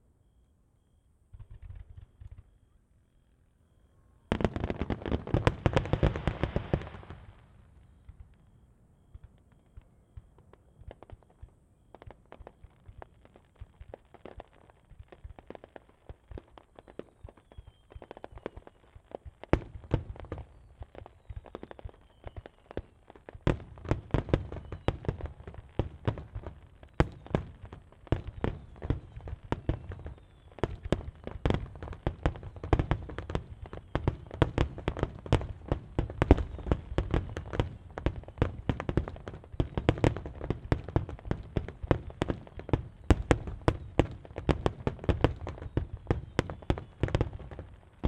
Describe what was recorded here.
Yasugawa Fireworks Display (野洲川花火大会), 25 July 2015. Audio-Technica BP2045 microphone aimed north toward fireworks launched over the river. Echo on the left is from an embankment and a Panasonic factory nearby.